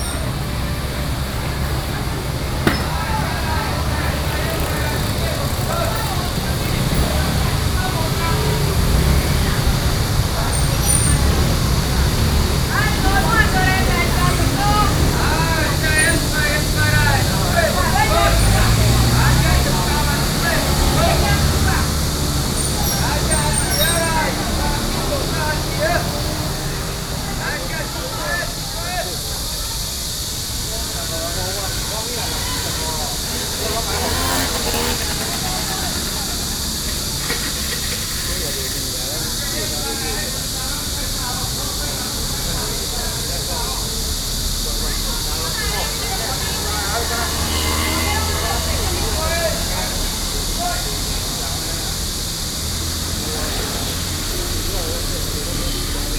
{
  "title": "Ln., Sec., Bao’an St., Shulin Dist., New Taipei City - in the traditional market",
  "date": "2012-06-20 10:35:00",
  "description": "in the traditional market, Cicada sounds, Traffic Sound\nSony PCM D50+ Soundman OKM II",
  "latitude": "24.99",
  "longitude": "121.43",
  "altitude": "21",
  "timezone": "Asia/Taipei"
}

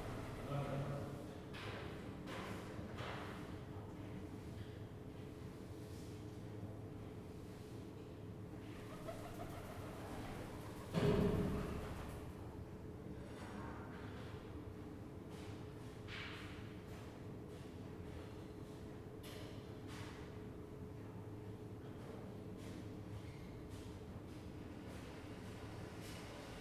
{
  "title": "Stare Miasto, Kraków, Polska - Courtyard",
  "date": "2014-07-31 15:00:00",
  "description": "Jagiellonian University's History of Art department's courtyard during a small maintenance.",
  "latitude": "50.06",
  "longitude": "19.94",
  "altitude": "214",
  "timezone": "Europe/Warsaw"
}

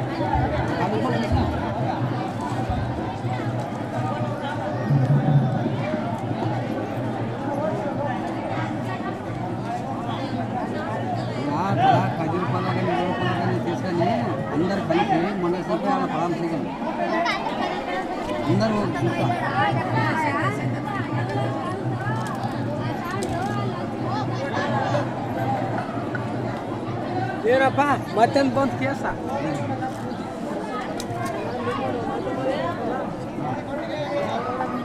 {
  "date": "2009-02-27 10:48:00",
  "description": "India, Karnataka, Hampi, Virupaksha temple, marriage, music",
  "latitude": "15.34",
  "longitude": "76.46",
  "altitude": "432",
  "timezone": "Asia/Kolkata"
}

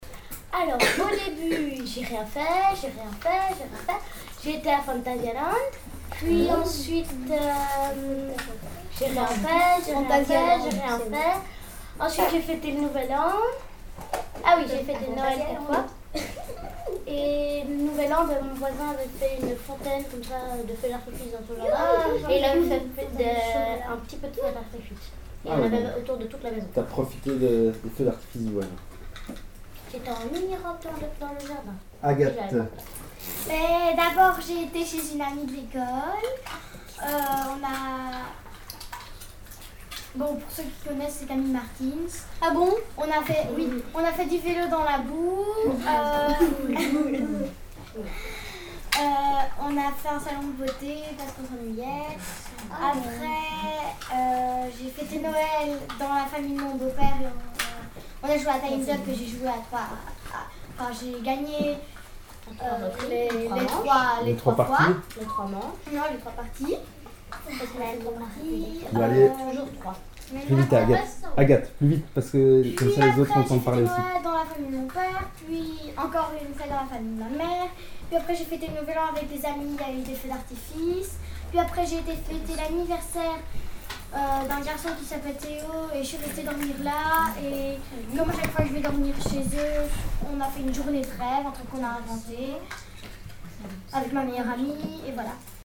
{
  "title": "Court-St.-Étienne, Belgique - La Chaloupe",
  "date": "2015-03-23 16:05:00",
  "description": "The children of the ludic place called \"La Chaloupe\" explains what they made during their holidays.",
  "latitude": "50.65",
  "longitude": "4.57",
  "altitude": "62",
  "timezone": "Europe/Brussels"
}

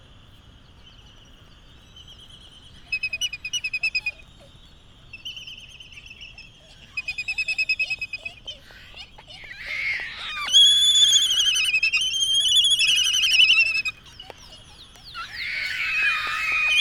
{
  "title": "United States Minor Outlying Islands - Laysan albatross dancing ...",
  "date": "2012-03-16 18:35:00",
  "description": "Laysan albatross dancing ... Sand Island ... Midway Atoll ... bird calls ... Laysan albatross ... canary ... open lavaliers on mini tripod ... voices ... traffic ... doors banging ...",
  "latitude": "28.22",
  "longitude": "-177.38",
  "altitude": "9",
  "timezone": "GMT+1"
}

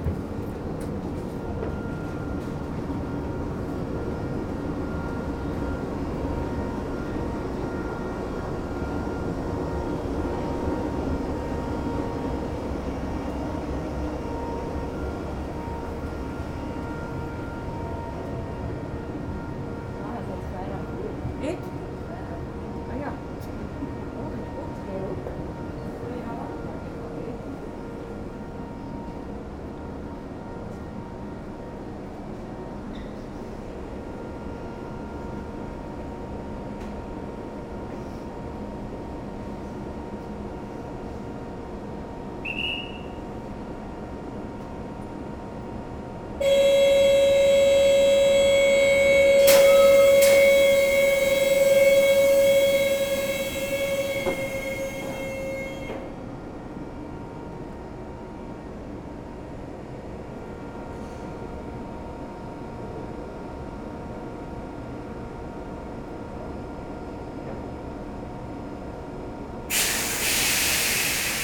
{
  "title": "Aalst, België - Aalst station",
  "date": "2019-02-23 09:15:00",
  "description": "An unpleasant atmosphere in the waiting room, with a lot of reverberation. Then on the platforms, two trains pass, one to Jette and the other towards Gent. At the end of the recording, the door closes again and ends this sound.",
  "latitude": "50.94",
  "longitude": "4.04",
  "altitude": "13",
  "timezone": "GMT+1"
}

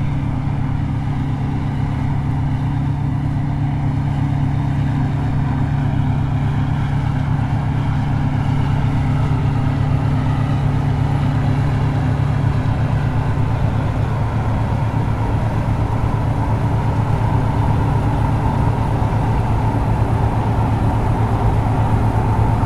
{
  "title": "Walhain, Belgique - Combine harvester",
  "date": "2016-08-15 13:30:00",
  "description": "A combine harvester in the fields, harvesting the wheat. This is the day, there's machines in the fields everywhere.",
  "latitude": "50.65",
  "longitude": "4.67",
  "altitude": "136",
  "timezone": "Europe/Brussels"
}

